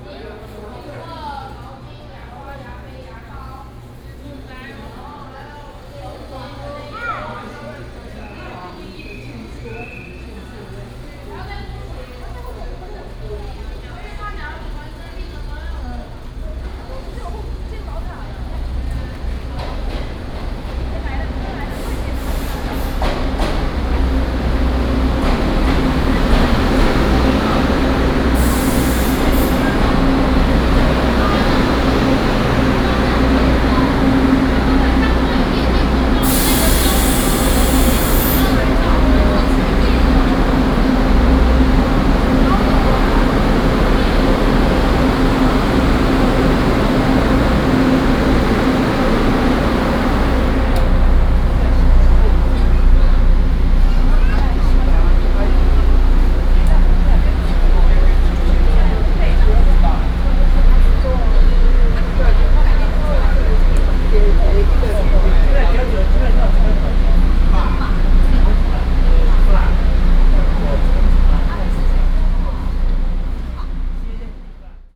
Ruifang Station, New Taipei City, Taiwan - in the train station platform
in the train station platform, Station Message Broadcast, Construction noise, Child